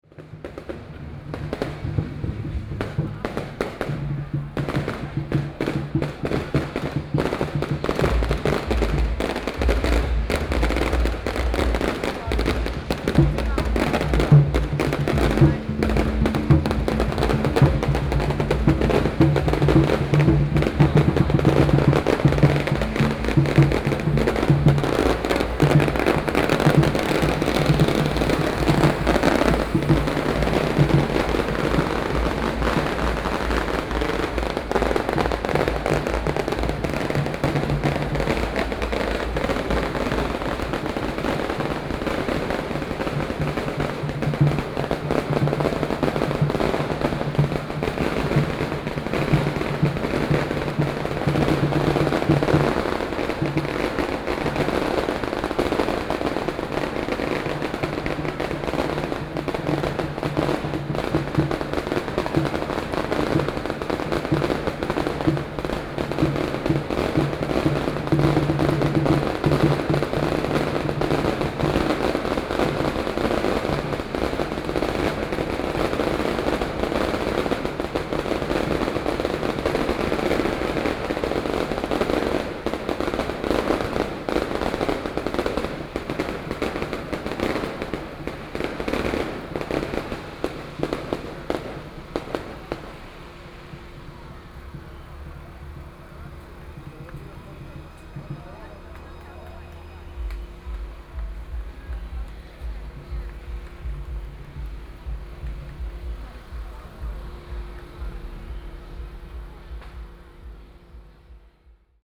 內湖區湖濱里, Taipei City - Festivals
Traditional Festivals, The sound of firecrackers, Traffic Sound
Please turn up the volume a little. Binaural recordings, Sony PCM D100+ Soundman OKM II
Taipei City, Taiwan, 12 April 2014